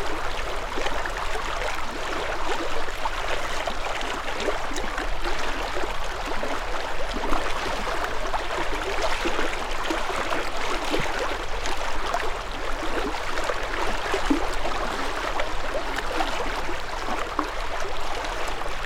Strömbäck-Kont naturreservat seashore, Umeå. Sea sounds
(Bothnian) Sea sounds on rocks.